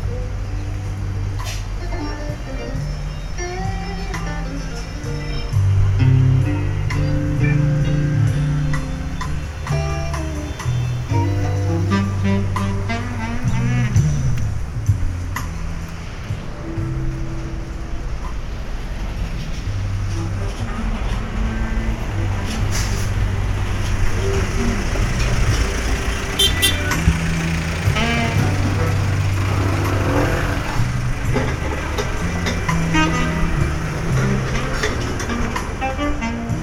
Kalkan, Turkey - 915g walking around main streets
Binaural recording of walk through main streets of Kalkan.
Binaural recording made with DPA 4560 on a Tascam DR 100 MK III.